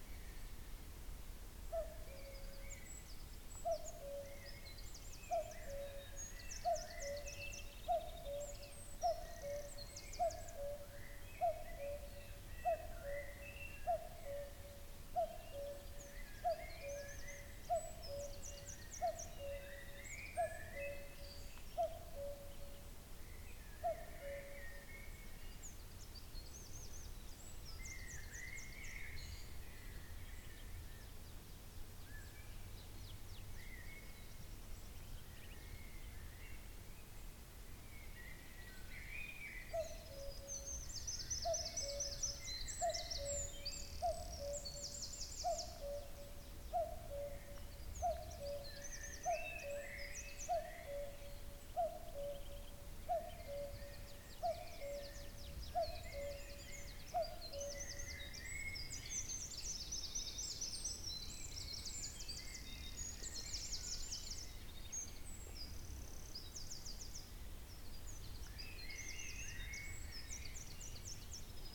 Near Eyemouth Lodge, New Forest National Park, Hampshire, UK - Cuckoo very nearby in a tree on the way to The Royal Oak pub in Fritham
We were walking towards The Royal Oak Pub in Fritham through a long stretch of wood in which we kept hearing this marvelous cuckoo. I just had the EDIROL R-09 with me, but was able to capture something of the bird's lovely song as it rang out between the trees. We stood very still to record the sound and at some point the cuckoo even moved into the tree that was closest to us. Beautiful sound.